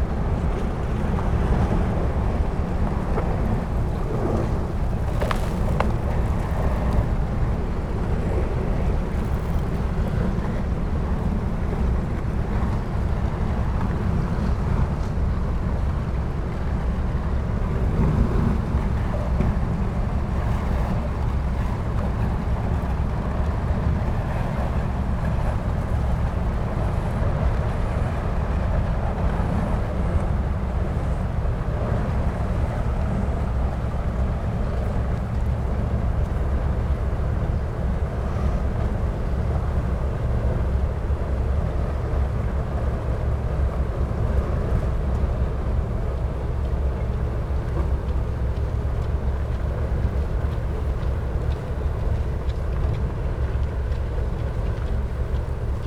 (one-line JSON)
{"title": "berlin, plänterwald: spreeufer, steg - coal freighter passing", "date": "2014-01-26 16:20:00", "description": "a coal freighter is arriving fron teh south-east germn lignite region. the ice on river Spree isn't yet thick enough for requiring an icebreaker, so these transporters open up the waterway by themself.\n(SONY PCM D50, DPA4060)", "latitude": "52.47", "longitude": "13.49", "altitude": "31", "timezone": "Europe/Berlin"}